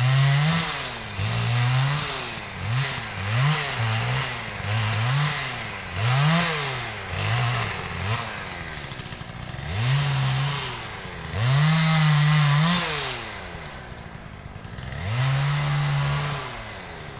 Lithuania, Utena, somebody cut trees
biking through the forest Ive spied two men cutting trees
22 September 2011